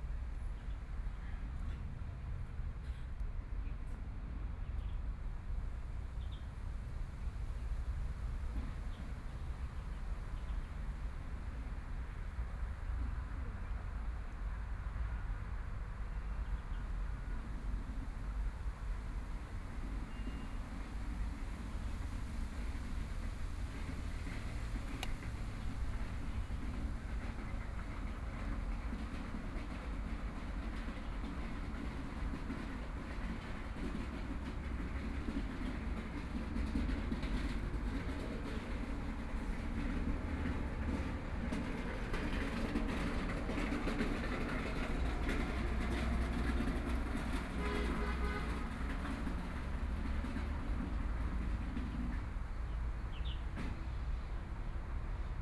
The woman in charge of pulling carts clean sweep, The Bund (Wai Tan), The pedestrian, Traffic Sound, Binaural recording, Zoom H6+ Soundman OKM II
Huangpu, Shanghai, China, 25 November 2013